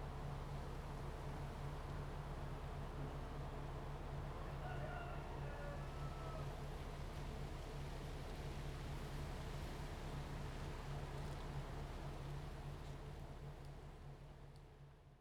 Abandoned military base, Forest and Wind, next to the parking, Chicken sounds
Zoom H2n MS+XY
3 November 2014, 13:05